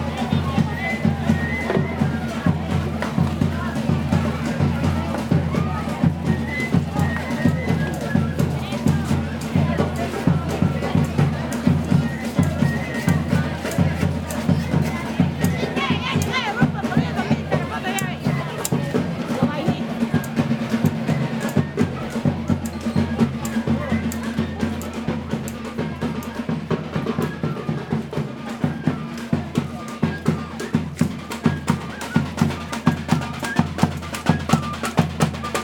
Jiron Ramirez Hurtado, Iquitos, Peru - Belen market sounds
Belen market sounds
Maynas, Loreto, Peru, 3 February, 14:19